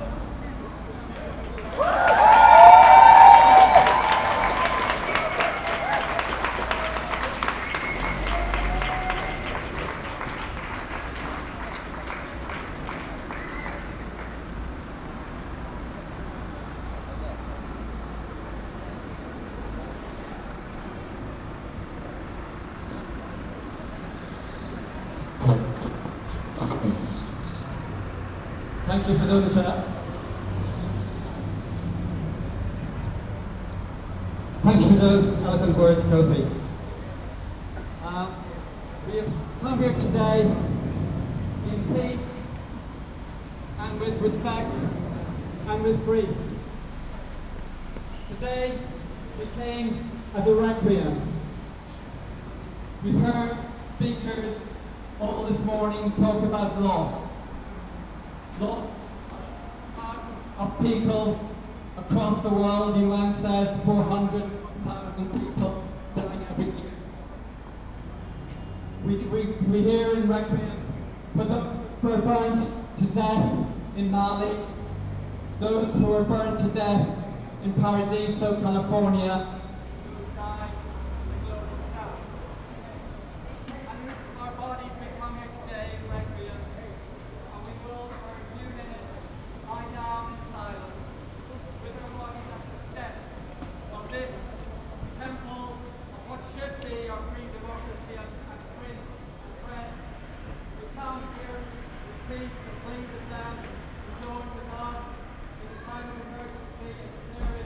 London Derry Street - Extinction Rebellion demonstration
Extinction Rebellion demonstration: Requiem for a Dead Planet “Newspapers – Tell the Truth”
In London – outside Northcliffe House, Derry Street, which is where the Independent, The Daily Mail, The Mail on Sunday, London Live, the Evening Standard and the Metro are all based.